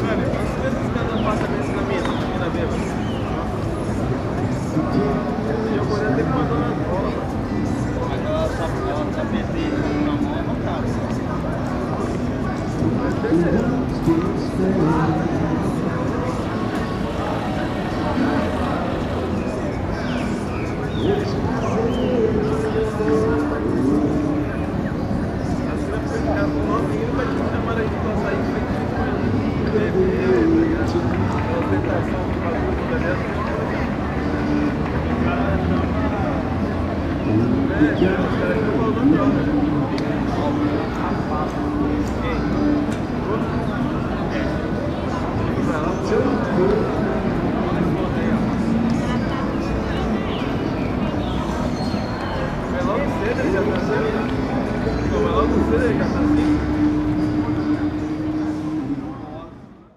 Calçadão de Londrina: Músico evangelizador: Praça Willie Davids - Músico evangelizador: Praça Willie Davids / Evangelizing Musician: Willie Davids Square
Panorama sonoro: músico com violão na Praça Willie Davids pregava e cantava músicas evangélicas com auxílio de uma caixa de som instalada em uma bicicleta e microfone. Ao entorno, caixas de som em lojas emitiam músicas diversas, pessoas transitavam pela praça, veículos circulavam pelas ruas próximas e um pássaro engaiolado cantava.
Sound panorama: musician with guitar in Willie Davids Square preached and sang gospel music with the aid of a sound box mounted on a bicycle and microphone. In the surroundings, loudspeakers in stores emitted diverse music, people traveled through the square, vehicles circulated in the nearby streets and a caged bird sang.
Londrina - PR, Brazil